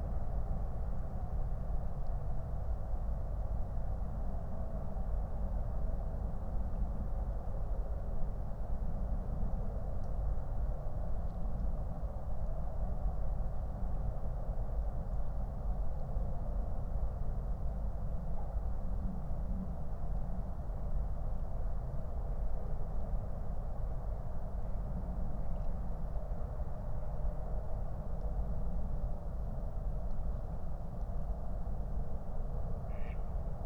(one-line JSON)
{"title": "Berlin, NSG Bucher Forst - Bogensee, forest pond ambience", "date": "2021-02-28 23:30:00", "description": "(remote microphone: AOM5024/ IQAudio/ RasPi Zero/ LTE modem)", "latitude": "52.64", "longitude": "13.47", "altitude": "54", "timezone": "Europe/Berlin"}